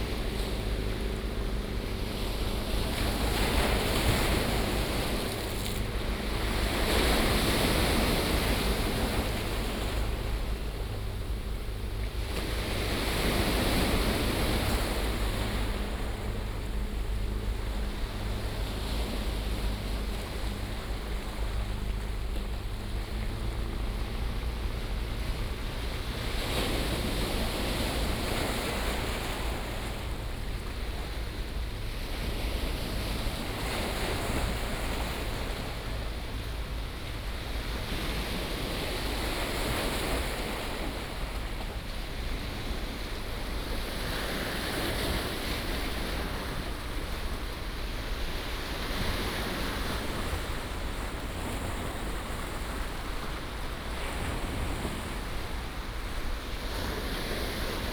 淡水觀海長堤, New Taipei City - River water impact on the river bank
On the banks of the river, There are yachts on the river, River water impact on the river bank